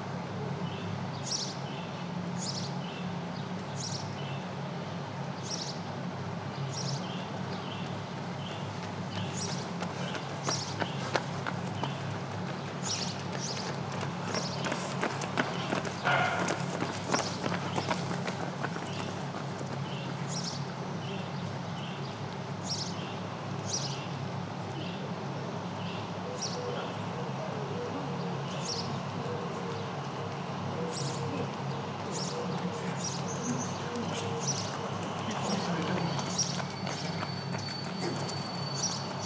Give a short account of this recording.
Homo Si Teć 2010, international half marathon and other ppls race discipline